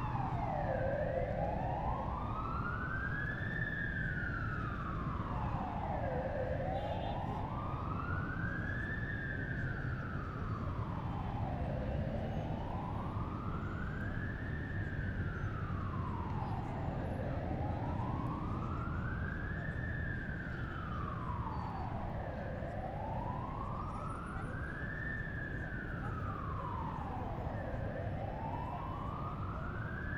Athens - Ambulance passing through Omonia square